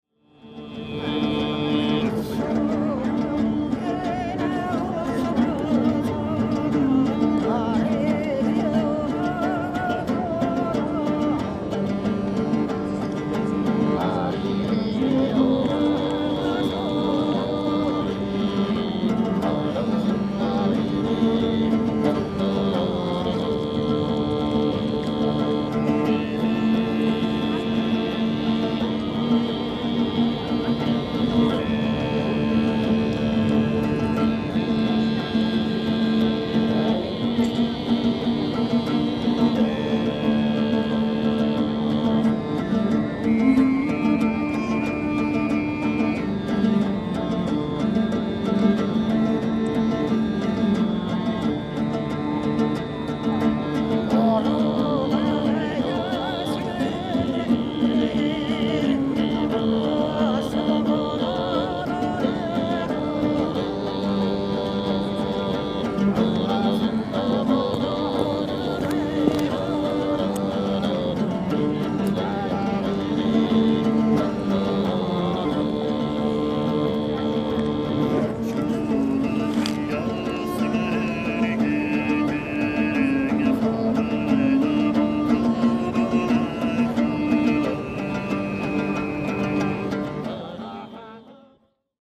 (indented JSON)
{
  "title": "Place Georges Pompidou, Paris - Musicians from Mongolia",
  "date": "2010-09-10 13:48:00",
  "description": "Musicians from Mongolia (in front of Centre Pompidou). Quick recording with Zoom H2.",
  "latitude": "48.86",
  "longitude": "2.35",
  "timezone": "Europe/Berlin"
}